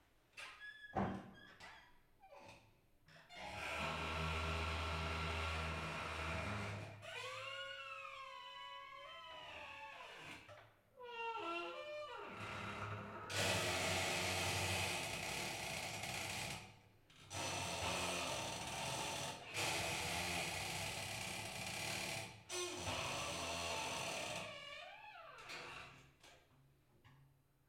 CREEKING DOORS SINAGOG MUSEUM R. da Fonte, Castelo de Vide, Portugal - CREEKING DOORS SINAGOG MUSEUM
Inside Castelo de Vide Sinagog museum, creeking doors of a sculpture. Recorded with a AT4025 into a SD mixpre6.
14 June, 15:45